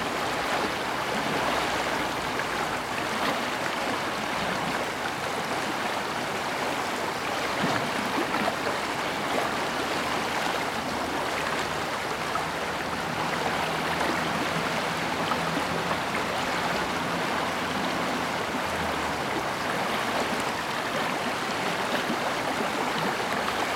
Rue Devant les Grands Moulins, Malmedy, Belgique - Warche river
And a few cars on the wet road nearby.
Tech Note : Sony PCM-D100 internal microphones, wide position.